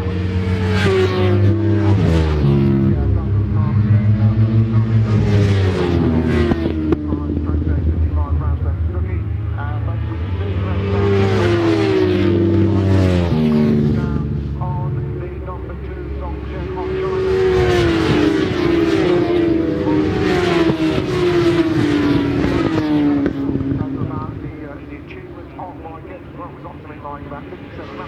Silverstone Circuit, Towcester, United Kingdom - world endurance championship 2002 ... race ...
fim world endurance championship ... the silverstone 200 ... one point stereo mic to minidisk ... some commentary ... bit of a shambles ... poorly attended ... organisation was not good ... the stands opposite the racing garages were shut ... so the excitement of the le mans start ... the run across the track to start the bikes ... the pit action as the bikes came in ... all lost ... a first ... and the last ...
19 May 2002, 14:00